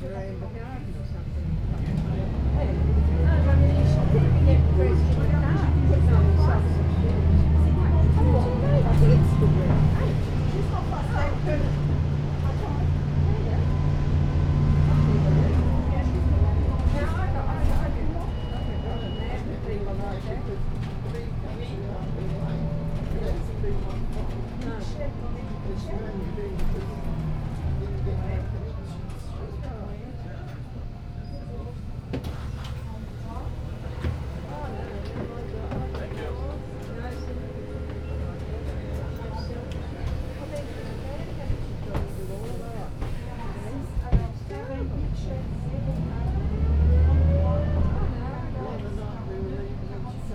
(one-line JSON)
{"title": "neoscenes: 433 bus from Millers Point", "date": "2010-09-12 08:22:00", "latitude": "-33.86", "longitude": "151.21", "altitude": "65", "timezone": "Australia/Sydney"}